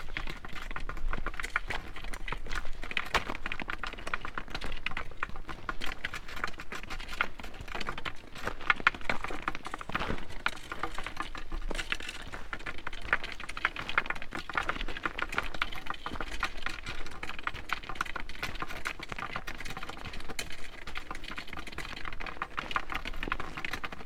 extantions, Lovrenška jezera, Slovenia - two branches
a walk with expanded arms - two branches, downwards on a rocky pathway through forest
Zreče, Slovenia, 21 October 2012